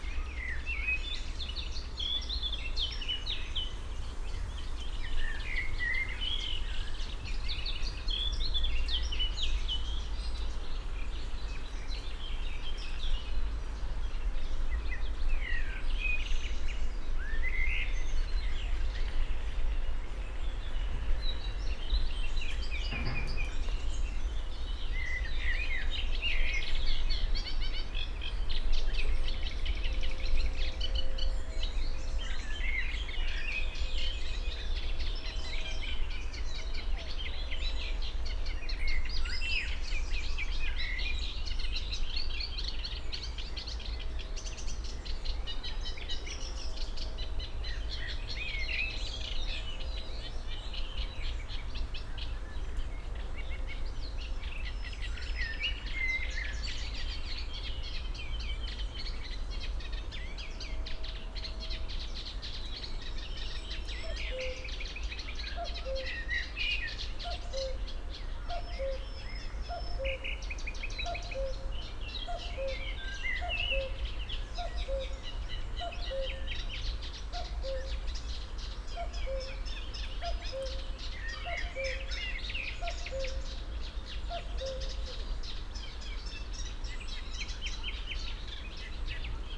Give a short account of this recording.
Reed warblers (Drosselrohrsänger) and Cuckoo (Kuckuck) south of Wuhle pond, sound aspects of a small Berlin inner-city river, (Sony PCM D50, Primo EM172)